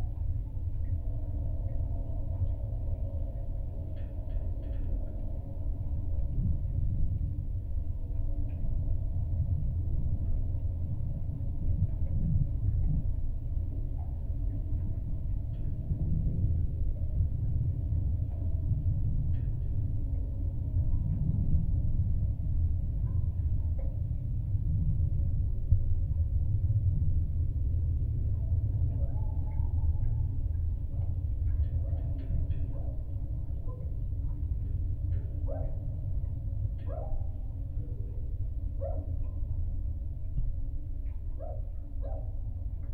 lake Kertuoja, Lithuania, metallic structures
contact microphones recording drone on the metallic parts of the footbridge